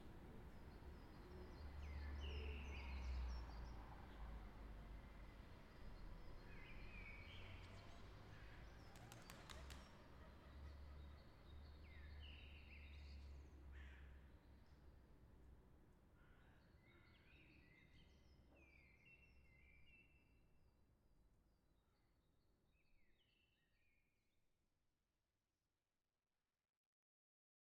Rue Verte, Schaerbeek, Belgique - Silent City : sunrise
From a window 2nd floor. Zoom H6. Early in the morning when the sun came up. 4th weeks of lockdown.
Région de Bruxelles-Capitale - Brussels Hoofdstedelijk Gewest, België - Belgique - Belgien, 11 April 2020, ~07:00